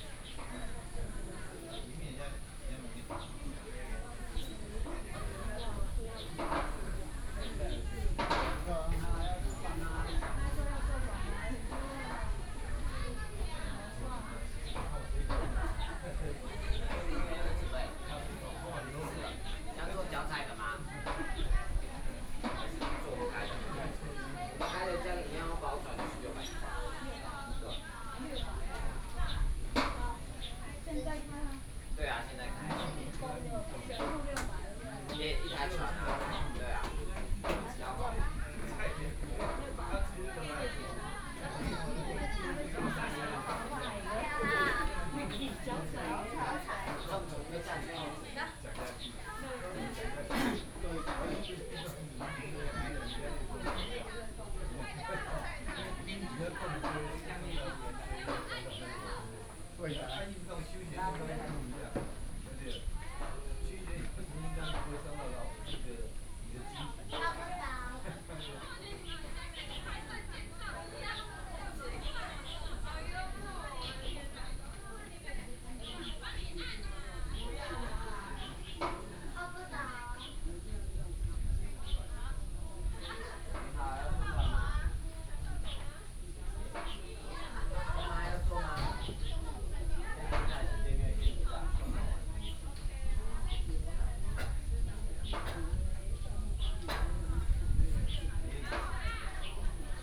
Liyu Lake, Shoufeng Township - Tourists
At the lake, Tourists, Yacht region, Birdsong, Hot weather